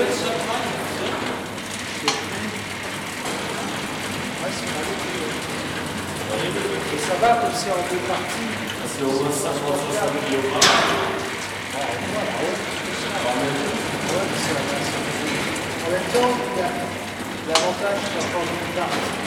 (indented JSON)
{
  "title": "Moulin de Ramond, Brassac, France - Inside the windmill turned into art gallery",
  "date": "2022-09-17 16:29:00",
  "description": "Recorded with Zoom H2",
  "latitude": "44.22",
  "longitude": "0.99",
  "altitude": "104",
  "timezone": "Europe/Paris"
}